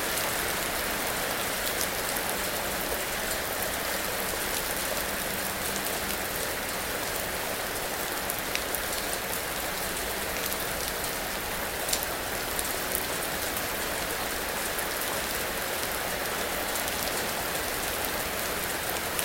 hard rain, st. gallen
heavy rain on leaves, terrace, metal table. recorded aug 15th, 2008.